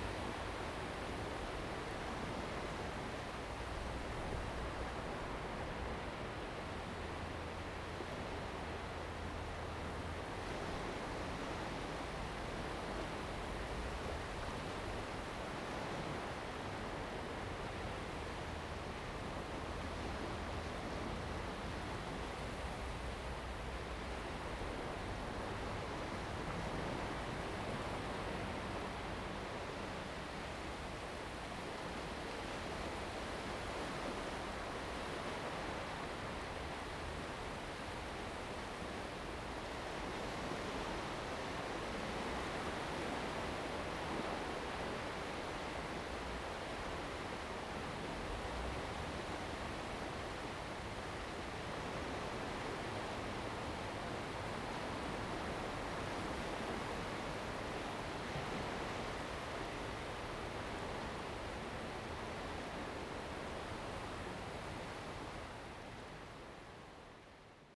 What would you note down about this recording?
Waves and tides, Zoom H2n MS+XY